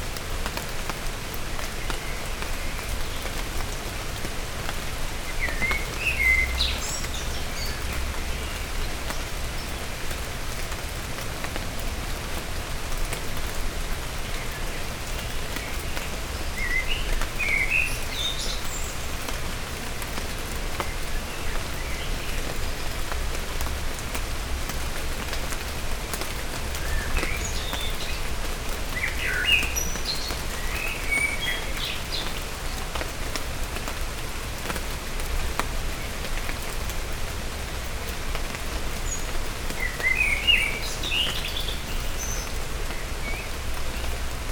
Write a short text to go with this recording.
In an abandoned mill, rain is falling. In the wet trees, blackbirds give a delicious song.